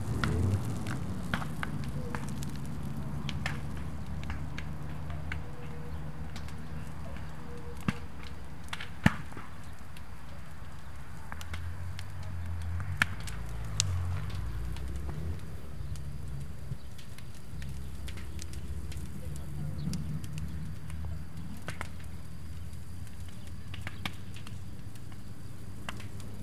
Echo in space as they made a bonfire to burn leaves and pruning waste.